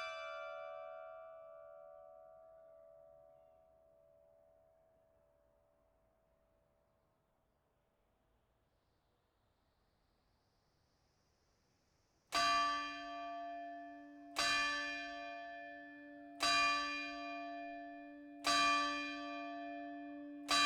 Rue Henri Dupuis, Saint-Omer, France - St-Omer - Pas de Calais - Carillon de la Cathédrale
St-Omer - Pas de Calais
Carillon de la Cathédrale
Petit échantillon des diverses ritournelles automatisées entre 10h et 12h
à 12h 05 mn, l'Angélus.